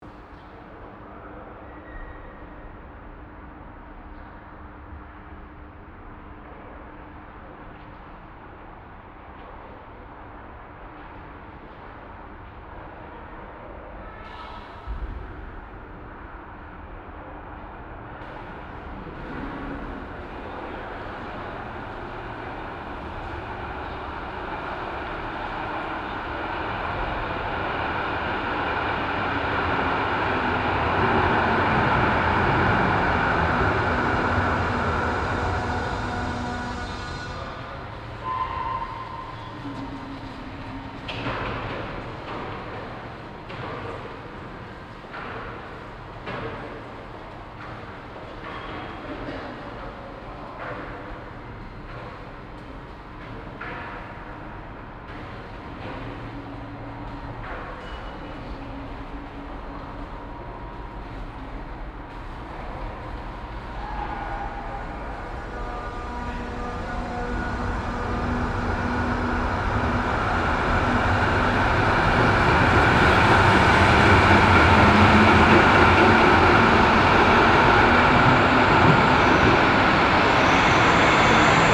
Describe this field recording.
In der U-Bahn station Rüttenscheider Stern. Der Klang einer ein- und ausfahrenden U-Bahn auf beiden Gleisseiten. In the subway station Rüttenscheider Stern. The sound of subways driving in and out the station on both sides of the tracks. Projekt - Stadtklang//: Hörorte - topographic field recordings and social ambiences